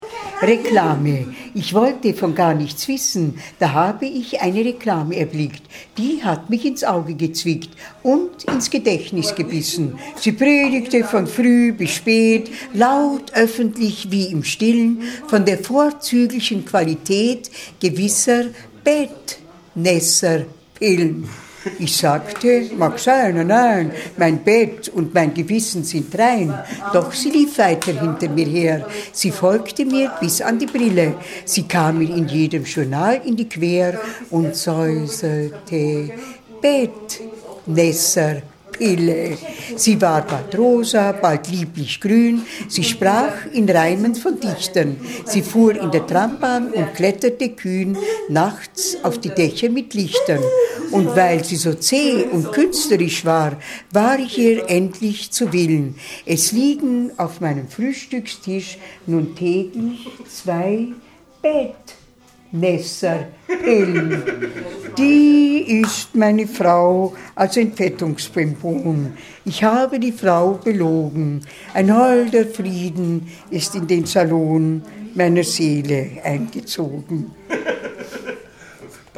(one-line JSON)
{"title": "graz i. - rosi mild spricht joachim ringelnatz", "date": "2009-11-26 23:05:00", "description": "rosi mild spricht joachim ringelnatz", "latitude": "47.07", "longitude": "15.44", "altitude": "361", "timezone": "Europe/Vienna"}